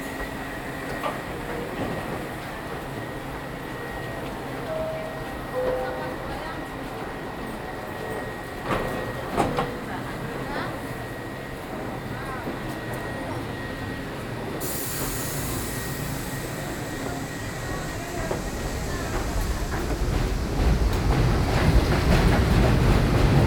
Jackson Heights, Queens, NY, USA - Roosevelt Jackson Heights Station
Roosevelt Jackson Heights Station: exiting the 7 train then walking down Roosevelt Ave. Binaural recording using H4n and soundman binaural microphone.